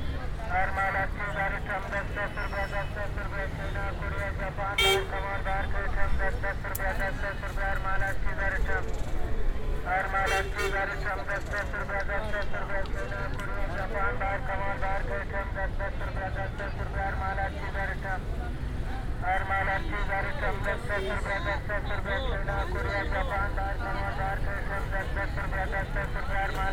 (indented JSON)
{
  "title": "Empress Market, Karachi, Pakistan - Walkthrough of Empress Market",
  "date": "2015-10-13 18:23:00",
  "description": "Circular walk through of Empress Market as part of a tour. Recording starts from the middle and ends in the street outside.\nRecorded using OKM Binaurals",
  "latitude": "24.86",
  "longitude": "67.03",
  "altitude": "14",
  "timezone": "Asia/Karachi"
}